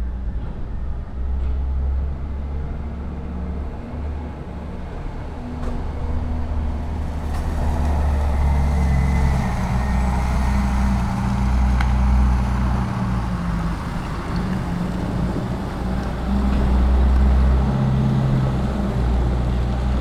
railway station Zizkov - vehicle moving containers around
23 June 2011, 12:35